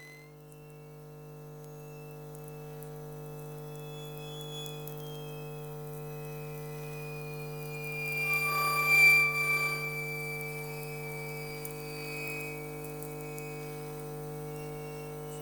Kaliningrad, Russia, electromagnetic field under the bridge
standing under the bridge with electromagnetic antenna and listening to the traffic above